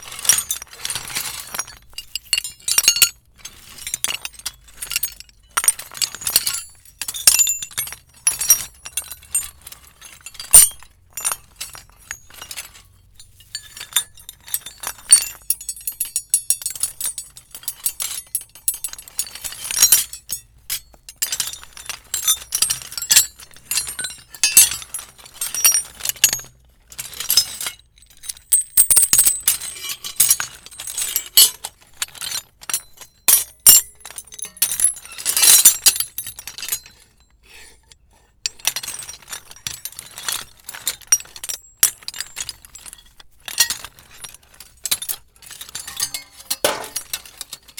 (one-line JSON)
{"title": "Srem, Andrew's house - metal rubbish", "date": "2010-07-03 12:54:00", "description": "rummaging with scraps of metal, old pipes, chains, bolts, wire, nails, rusty tools etc.", "latitude": "52.09", "longitude": "17.00", "altitude": "85", "timezone": "Europe/Warsaw"}